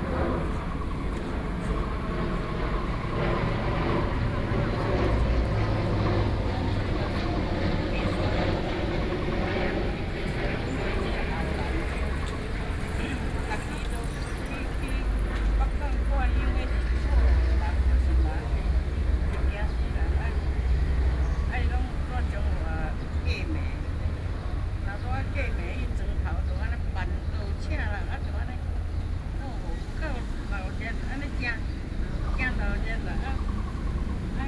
in the Park, Birds sound, Traffic Sound, Aircraft flying through
Sony PCM D50+ Soundman OKM II